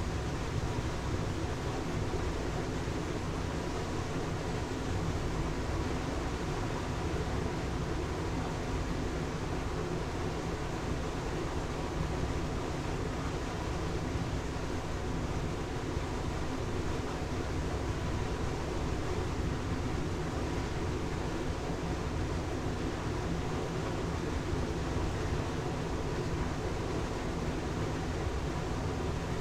Nolenai, Lithuania, big pipe
water stream going to the large pipe
Utenos apskritis, Lietuva, 21 March 2020, 17:00